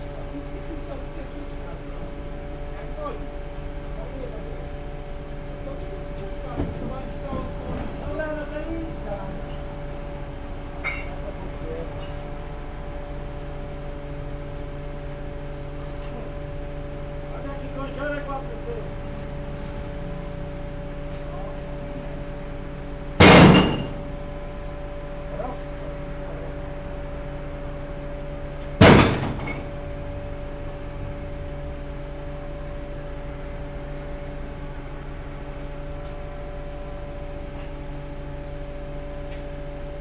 Dekerta, Kraków, Poland - (814 XY) Glass garbage service
Stereo recording of a service collecting glass garbage.
Recorded with Rode NT4 on Sound Devices Mix-Pre6 II.